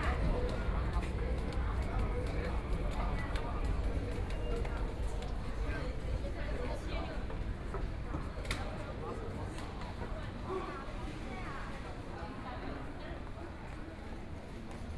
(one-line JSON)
{"title": "East Nanjing Road Station - walking in the Station", "date": "2013-11-21 14:44:00", "description": "walking in the Station, Transit station, The crowd, Binaural recording, Zoom H6+ Soundman OKM II", "latitude": "31.24", "longitude": "121.48", "altitude": "10", "timezone": "Asia/Shanghai"}